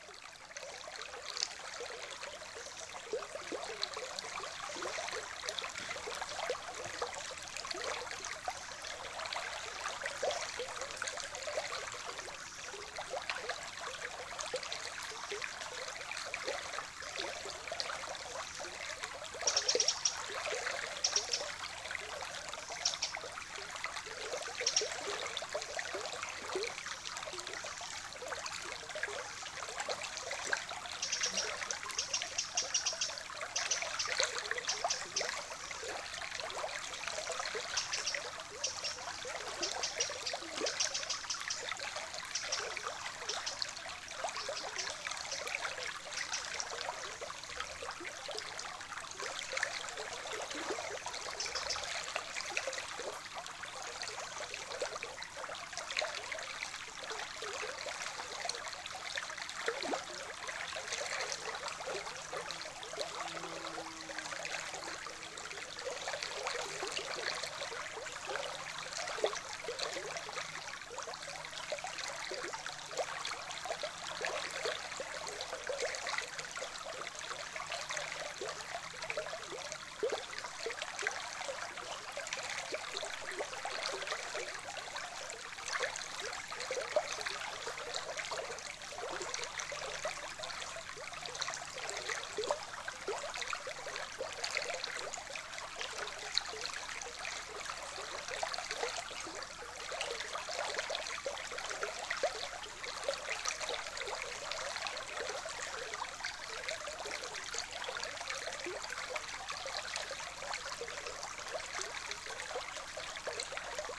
Vyžuonos, Lithuania, riverscape
stones in the river add more murmurring sound...
Utenos apskritis, Lietuva, 30 August 2019, 17:20